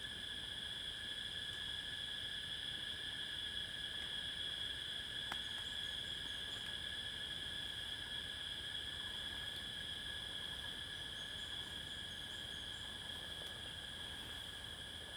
In the woods, Cicada sounds
Zoom H2n MS+XY
5 May, ~14:00